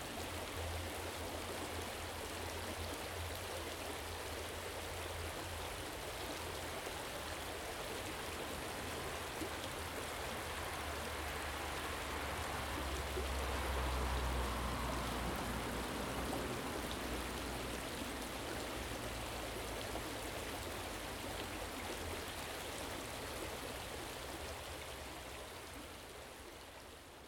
Dunmurry, Lisburn, Reino Unido - Dunmurry water stream
A little water stream running down close by Dunmurry Industrial State
County Antrim, UK, 17 March